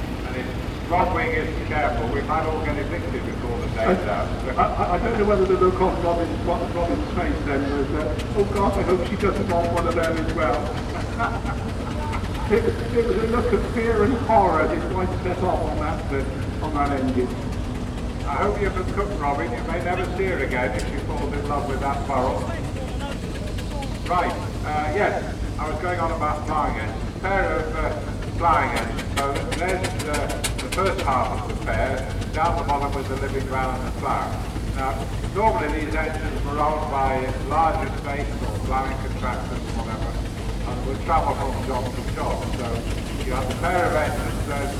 The Great Dorset Steam Fair, Dairy House Farm, Child Okeford, Dorset - Steam contraptions parading with commentary
(location might be slightly wrong) The Great Dorset Steam Fair is unbelievably big. There are hundreds and hundreds of steam things in what seems like a temporary town across many fields. In this recording, engines and steam contraptions of all kinds parade around a big field as a dude commentates through the tannoy system.
29 August 2016, 10:55